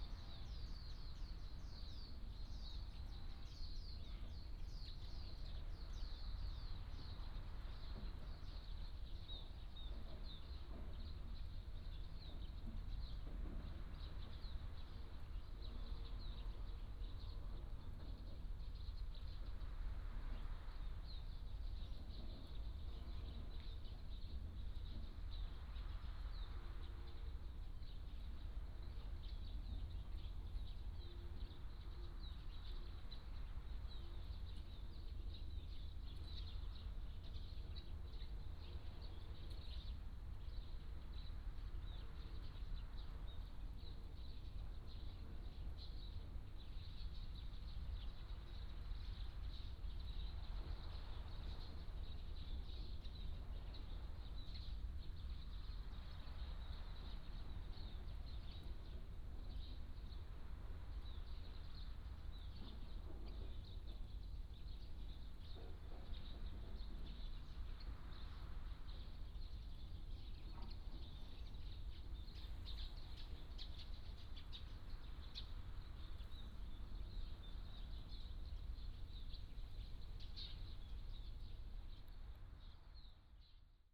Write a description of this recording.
In the woods, Sound of the waves